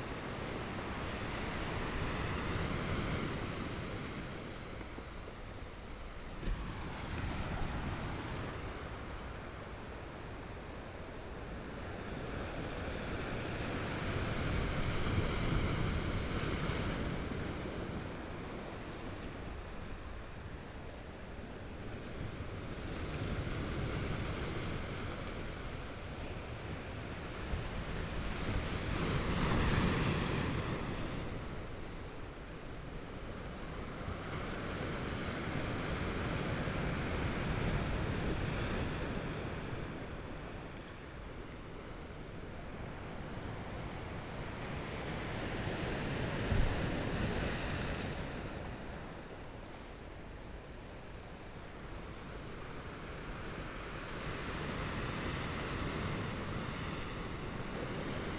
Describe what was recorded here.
Sound of the waves, Binaural recordings, Zoom H4n+ Soundman OKM II + Rode NT4